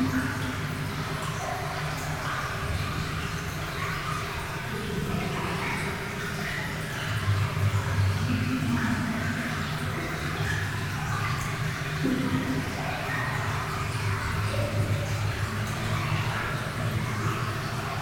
Rosselange, France - Remoteness in the mine
Feeling the remoteness in the underground mine. We are far from everything and deeply underground. Water is falling in the tunnel in a distant and melancholic constant rain.